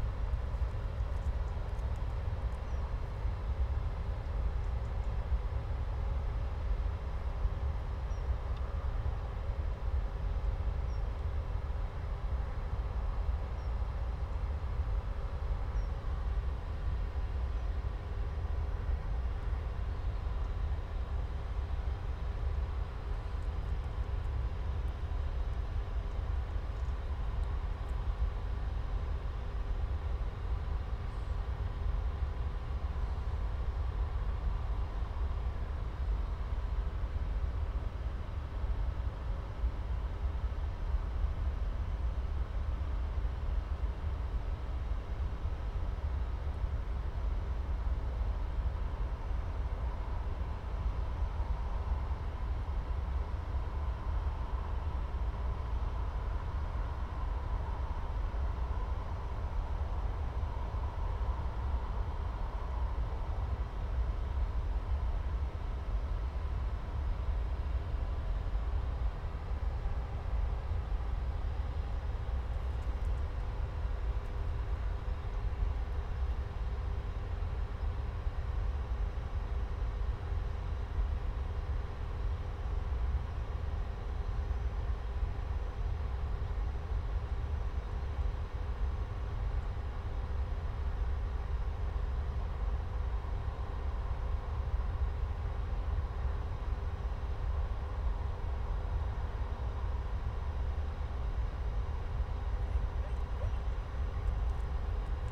Pontop Pike transmitting station, County Durham, UK - Pontop Pike transmitting station
Recording facing Pontop Pike transmitting station. Sound of generator in station, birds and cars on road in distance. Recorded on Sony PCM-M10.
Stanley, UK, 24 August 2016, 20:00